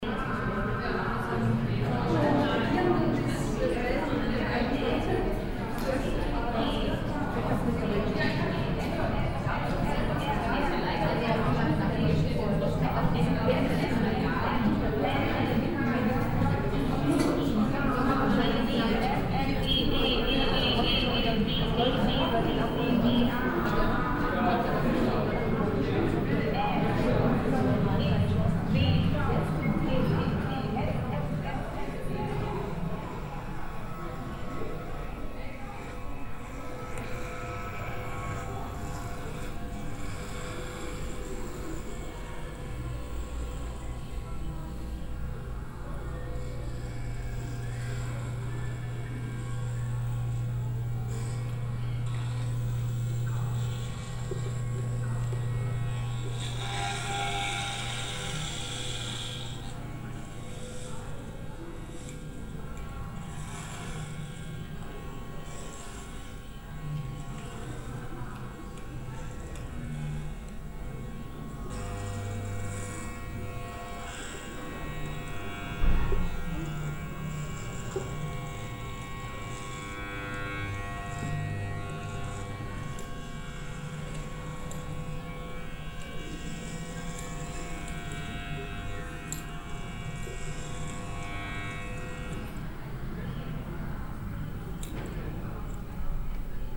Düsseldorf, Malkasten, media exhibition - düsseldorf, malkasten, media exhibition
at an media exhibition at düsseldorf malkasten building
soundmap nrw - social ambiences and topographic field recordings
Deutschland, European Union, 19 April 2010